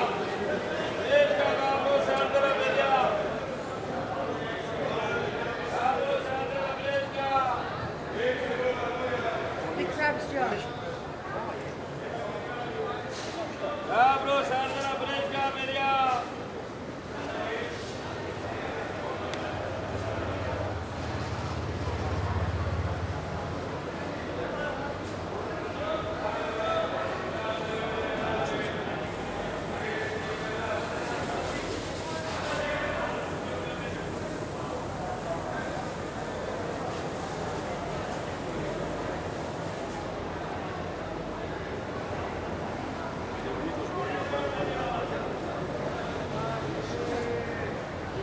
Athinas, Athina, Grèce - Municipal central market